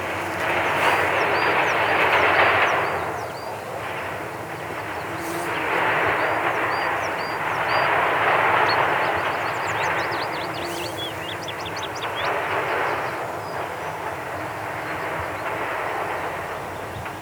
Walking Holme truck and bee
parabolic dish recording looking down the valley with close up bees and long grass and a logging truck down driving up a rough track.